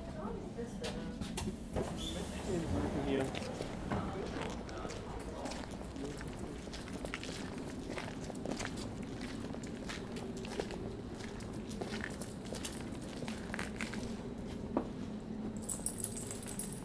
Märkische Str., Hoppegarten, Deutschland - S5 Recording, Station Birkenstein
This recording was done inside the S5, with a zoom microphone. The recording is part of project where i try to capture the soundscapes of public transport ( in this case a train)
Märkisch-Oderland, Brandenburg, Deutschland